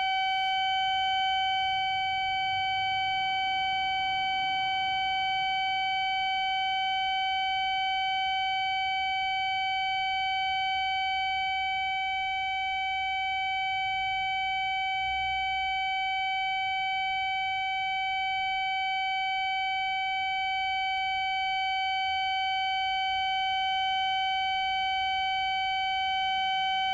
berlin, sanderstraße: defekte gegensprechanlage - the city, the country & me: broken intercom system
the city, the country & me: october 30, 2010